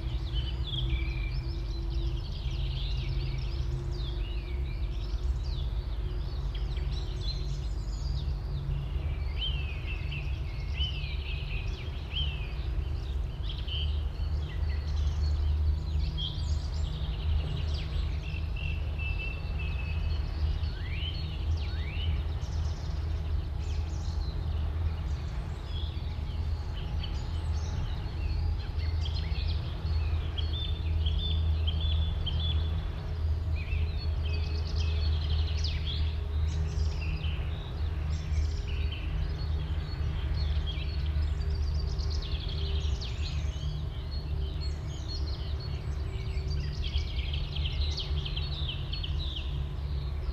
Birds singing include song thrush, chaffinch, skylark, ravens, crows.
Around 4min the generator speeds up and its hum rises in pitch, but the wind is very light and drops again. Very distant cranes can be heard towards the end.